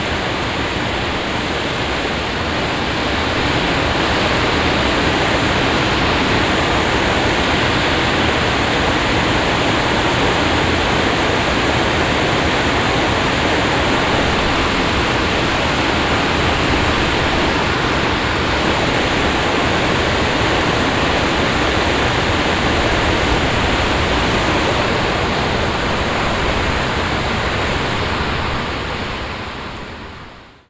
at the small river dam of the Dhünn.
Water floating down an artifical concrete halfpipe.
soundmap d - social ambiences - and topographic foeld recordings